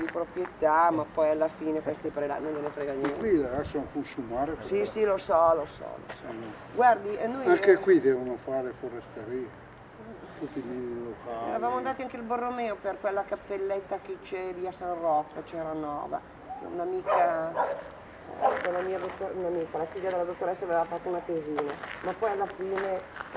Castle of Lardirago (PV), Italy - A visit fo the Castle
By the courtyard, following a small group entering the Castle, closed for most time of the year, and visiting the small church.